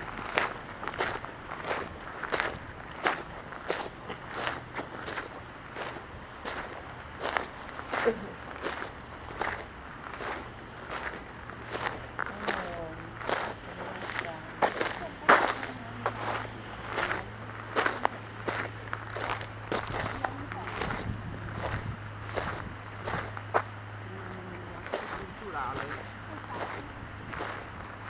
Castle of Lardirago (PV), Italy - A visit fo the Castle
By the courtyard, following a small group entering the Castle, closed for most time of the year, and visiting the small church.
Province of Pavia, Italy, 20 October